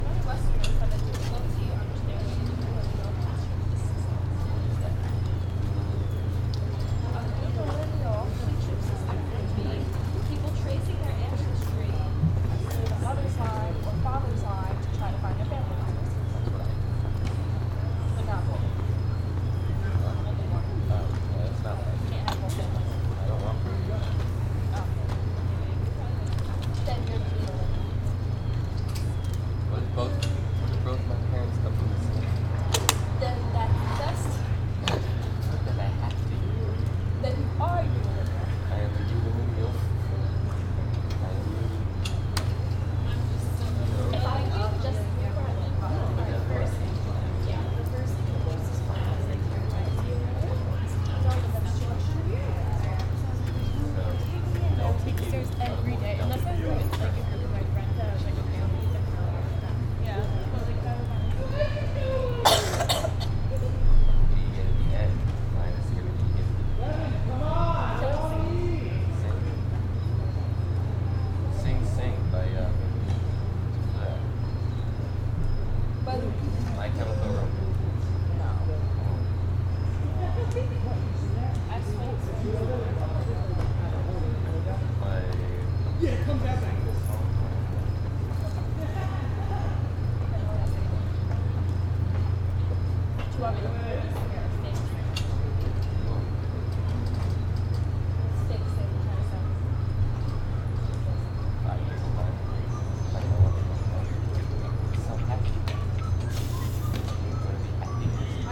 The College of New Jersey, Pennington Road, Ewing Township, NJ, USA - Outside of a TCNJ Residence Hall
This was recorded outside of The College of New Jersey's freshman Wolfe Hall. It is early evening/mid-day and it is not particularly busy. The ambience is likely from a heating unit or other machinery nearby on campus.